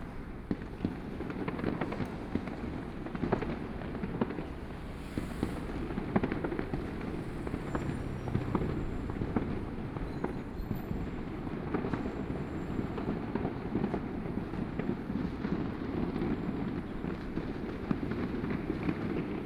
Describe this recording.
The sound of fireworks, Binaural recordings, Sony PCM D50 + Soundman OKM II